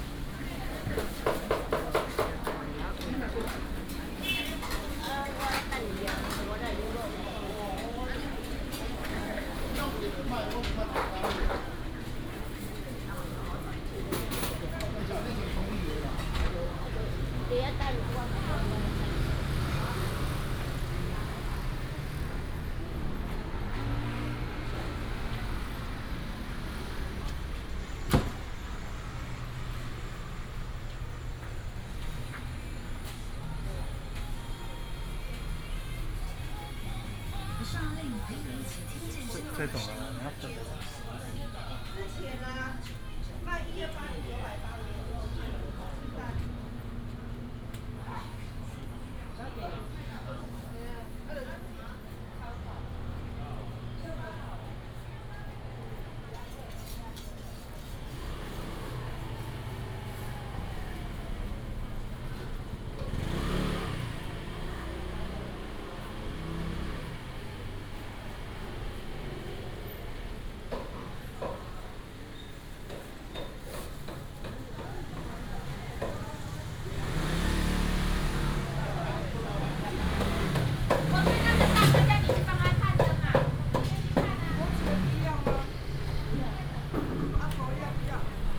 新竹都城隍廟, Hsinchu City - in the temple
Walking in the square of the temple, Many street vendors